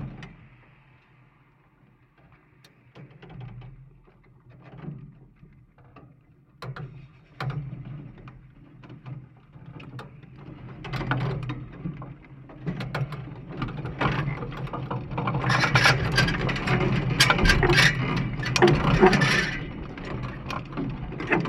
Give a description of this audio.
Quadruple contact microphone recording of a metal frame of a tent. Blowing wind forces the metal construction to crack and clank in complex and interesting ways. A little bit of distant traffic hum is also resonating here and there throughout the recording. Recorded with ZOOM H5.